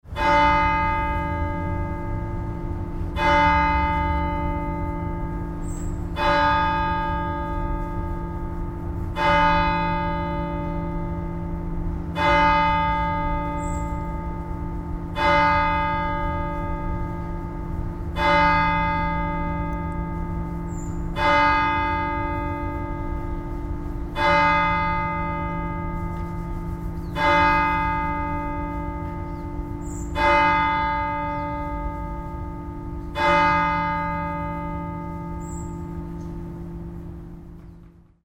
mittagsglocken, der christus kirche - stereofeldaufnahmen im september 07 mittags
project: klang raum garten/ sound in public spaces - in & outdoor nearfield recordings